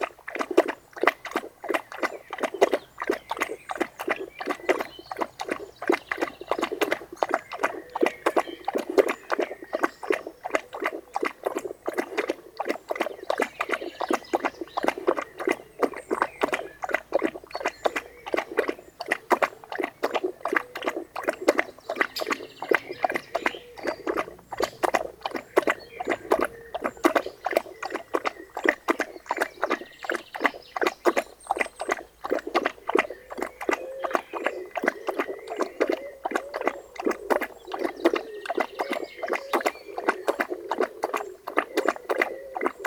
Court-St.-Étienne, Belgique - Dog drinking
Emeline put water in a fountain, in aim the birds can drink. Ten minuts after, there's only a small problem. Bingo the dog finds this very enjoyable, so he drinks everything. A few time after, a tit land on the recorder. A seed is taken and in a wings rustling, it goes back to the trees.